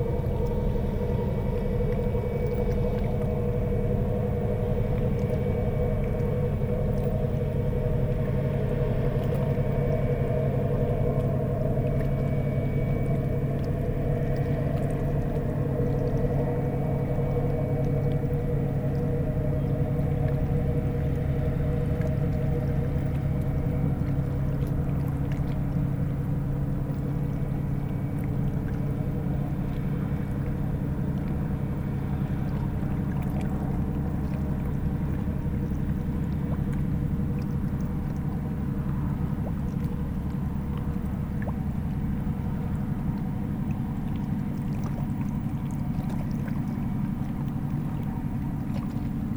Notre-Dame-de-Bliquetuit, France - Boat
A boat is passing by on the Seine river, it's an industrial boat, the Duncan.